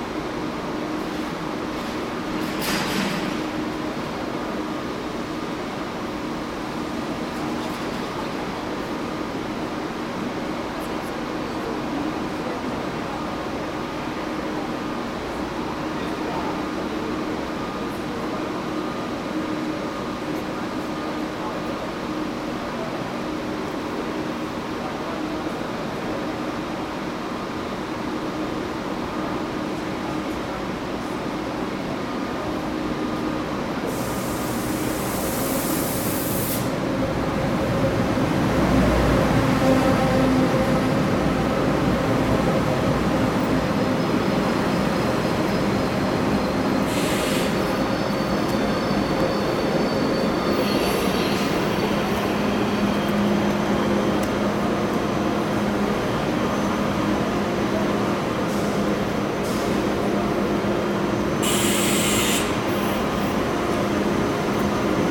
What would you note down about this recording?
atmosphere at modern db tram station frankfurt airport - train driving in, soundmap d: social ambiences/ listen to the people - in & outdoor nearfield recordings